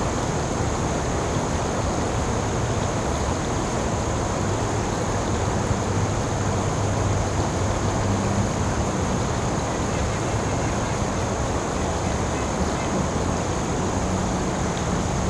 Palisades W Trail, Atlanta, GA, USA - Calm River
A calm section of the Chattahoochee river. Water and insects are audible throughout the recording. There's a constant hum of traffic in the background due to close proximity to the highway.
Recorded with the unidirectional microphones of the Tascam Dr-100miii. Minor EQ was done in post to reduce rumble.